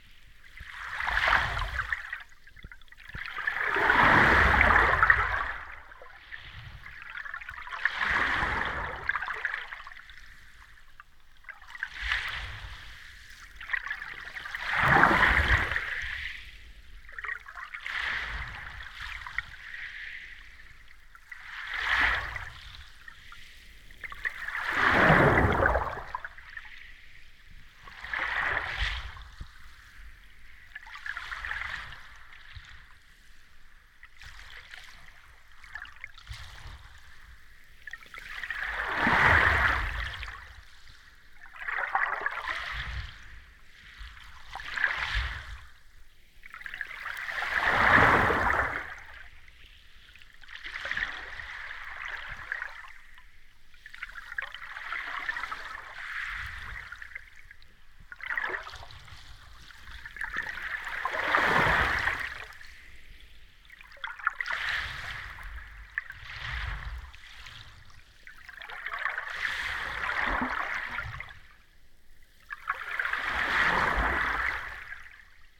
Saugatauk Dunes State Park, Holland, Michigan, USA - Saugatuck Dunes State Park
Hydrophone recording within waves breaking on beach.